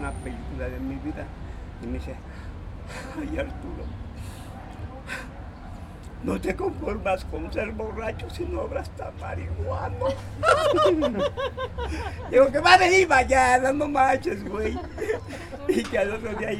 Calle Peralvillo, Tepito, Col. Morelos - El Tirantes de Tepito
Encuentro con Arturo Ayala Plascencia "Tirantes", uno de los habitantes y personajes más conocidos de Tepito. Su historia y su vida fue inmortalizada en el cine con la película "Lagunilla mi barrio", protagonizada por Héctor Suárez. Tirantes recuerda ese episodio entre broma y broma, pronunciando además su famoso grito.
Grabación realizada con una Tascam DR-40